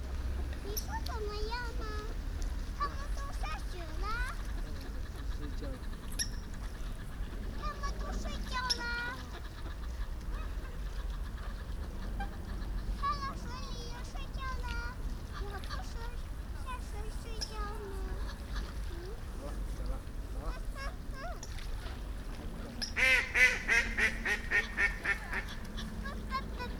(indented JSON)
{
  "title": "Chinese girl enjoying bird sounds",
  "date": "2010-12-25 14:10:00",
  "description": "Small Chinese girl enjoying the sounds of coots and ducks at the Hofvijfer. Binaural recording.",
  "latitude": "52.08",
  "longitude": "4.31",
  "altitude": "7",
  "timezone": "Europe/Amsterdam"
}